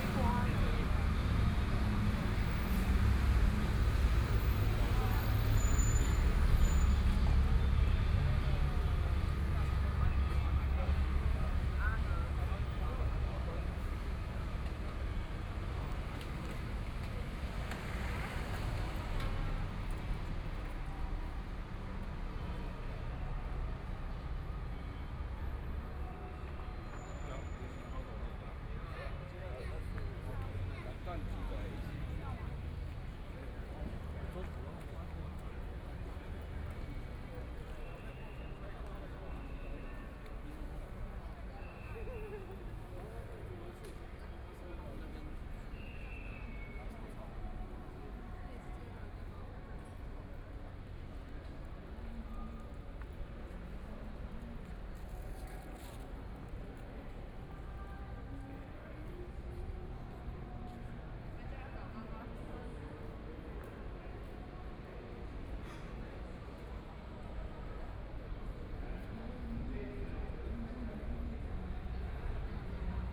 Jinan Rd., Taipei City - Sit still
Walking through the site in protest, People and students occupied the Legislative Yuan
Binaural recordings